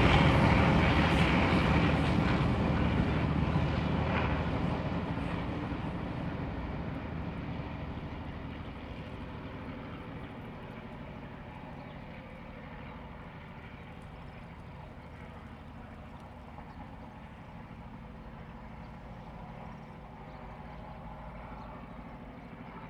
南太麻里橋, Taimali Township - On the river bank
On the river bank, Traffic sound, Bird cry, The cry of the crown, The distant train travels through
Zoom H2n MS+XY
1 April, 13:36